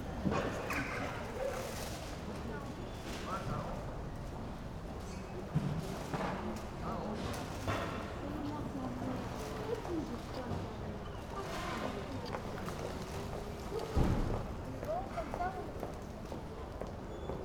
Place de l'Hôtel de ville, Aix-en-Provence - market setup
the flower market is beeing set up
(PCM D50)
Aix-en-Provence, France, 9 January 2014, 08:30